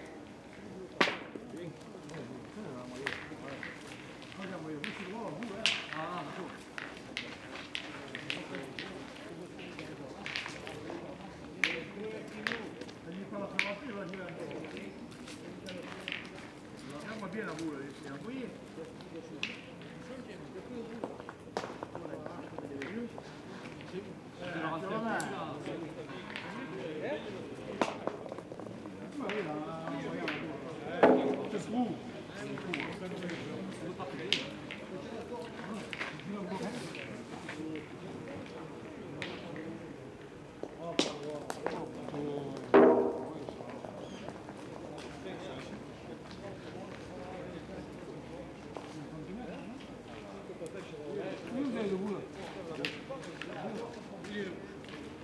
Parc Jourdan, Aix-en-Provence, France - Jeu de Boules
Jeu de Boules. stereo mic, cassette recorder.